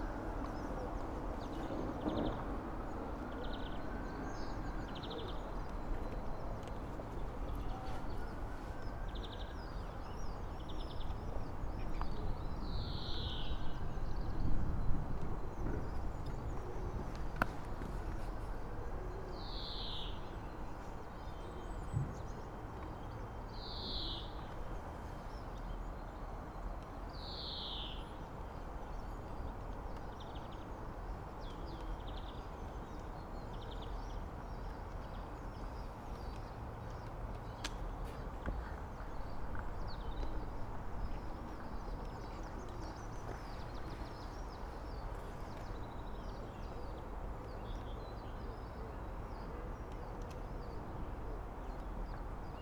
Rewlwy Rd., Oxford, UK - on bridge, ambience
on the bridge, Rewley Rd., early spring morning ambience
(Sony PCM D50)
Oxfordshire, UK